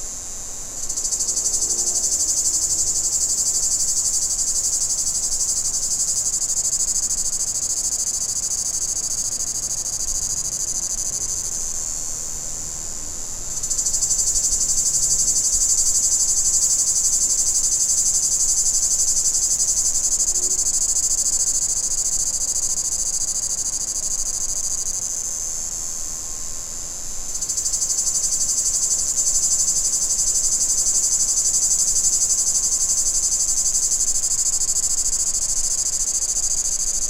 cicada, walker, wind in the trees
Captation : ZOOM H6